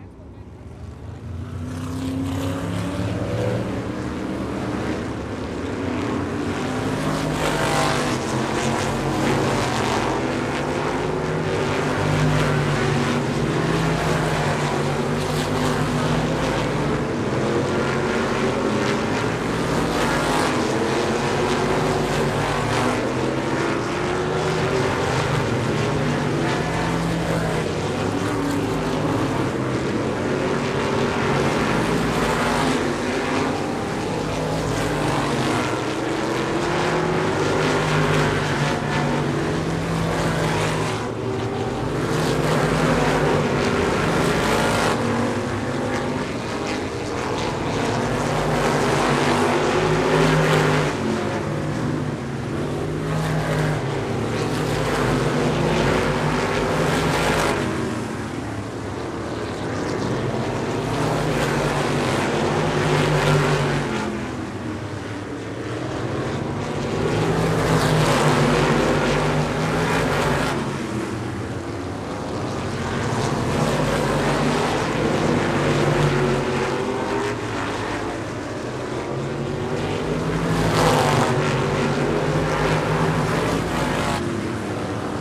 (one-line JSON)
{"title": "Hudson Speedway - Supermodified Practice", "date": "2022-05-22 12:29:00", "description": "Practice for the SMAC 350 Supermodifieds at Hudson Speedway", "latitude": "42.81", "longitude": "-71.41", "altitude": "67", "timezone": "America/New_York"}